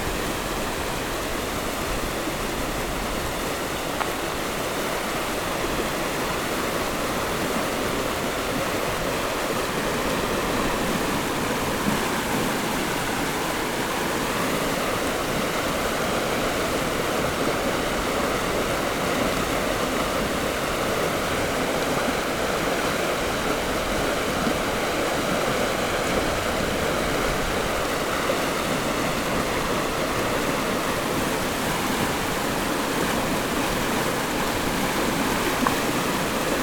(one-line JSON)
{"title": "Lanyang River, 員山鄉中華村 - Stream after Typhoon", "date": "2014-07-25 14:07:00", "description": "Stream after Typhoon, Traffic Sound\nZoom H6 MS+ Rode NT4", "latitude": "24.70", "longitude": "121.65", "altitude": "84", "timezone": "Asia/Taipei"}